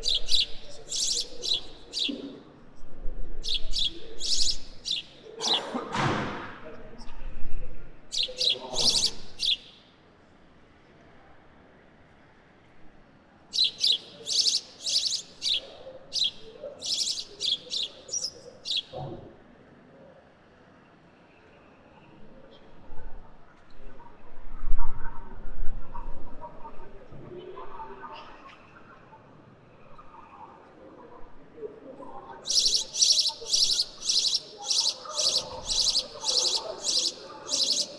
Noisy sparrows discuss on a square called Voie de l'Utopie.